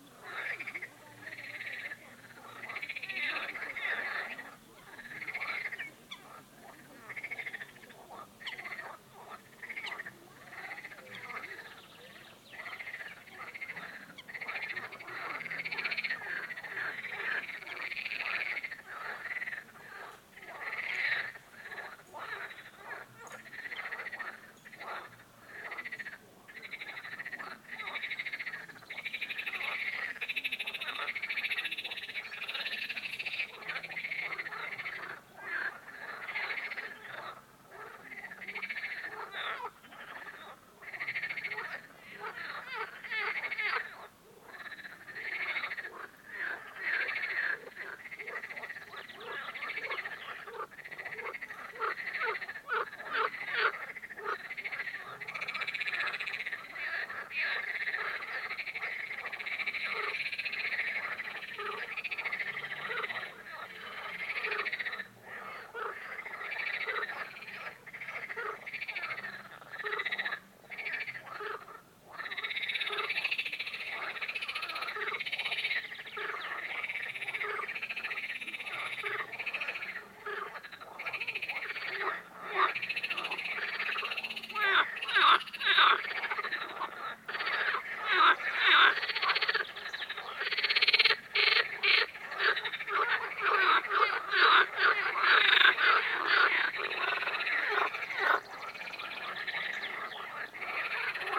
River Nemunas frogs. The bateries of my main recorder died, so, as always, back-up device is Sennheiser ambeo smart headset...
Birštono savivaldybė, Kauno apskritis, Lietuva, 19 June 2022